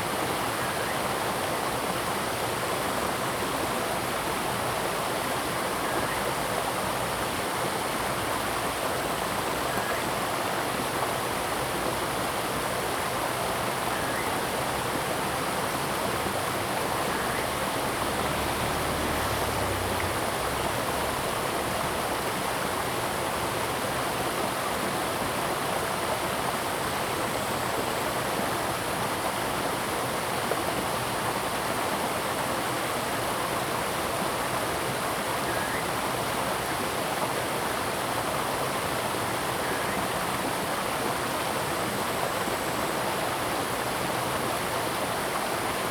Maopukeng River, 埔里鎮桃米里 - Stream and Birds
Stream and Birds
Zoom H2n MS+XY